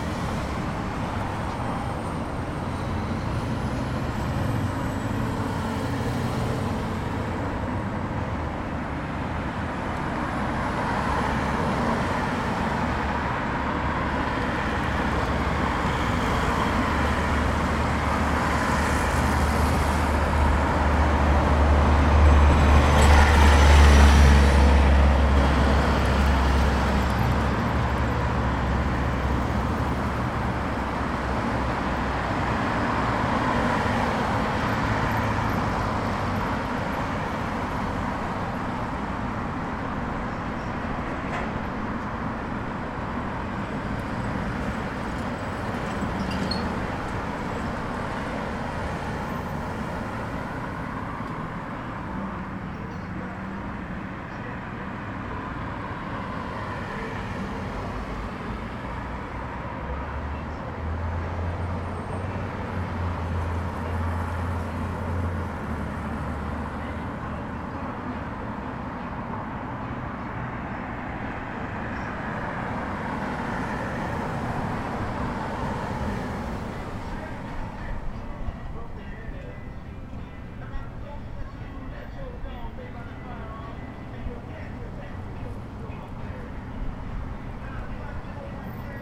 Crossing the road at Broadway and i believe Penn